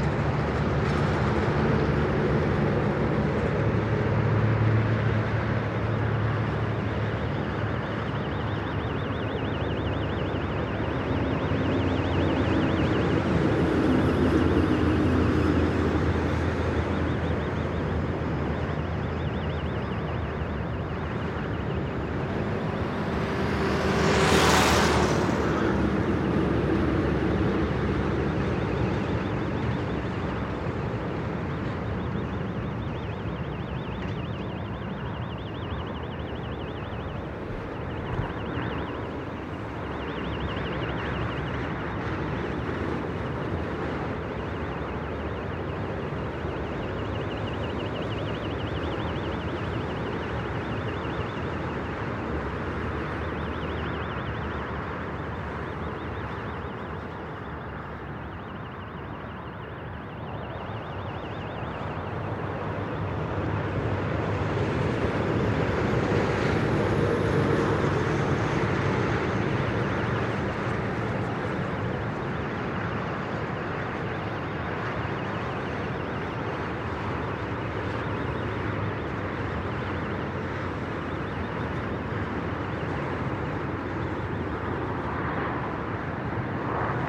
Camino de Hueso, Mercedes, Buenos Aires, Argentina - Del Campo a la Ruta 4
Recorriendo el Camino de Hueso, desde los límites rurales de Mercedes hasta la Ruta Nacional 5
June 2018